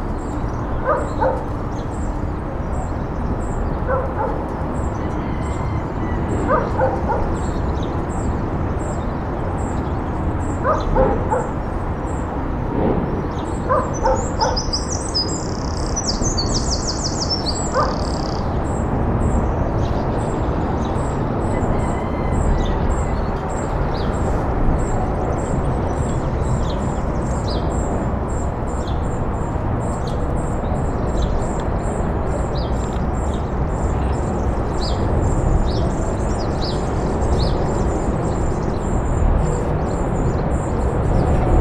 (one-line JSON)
{"title": "Recanto da Rua Nova, Avintes, Portugal - CIS exterior", "date": "2022-04-06 11:00:00", "description": "Recording made outside of Centro de Inclusão Social with a Sony M10. Traffic, birds and dog barks in the background.", "latitude": "41.12", "longitude": "-8.57", "altitude": "34", "timezone": "Europe/Lisbon"}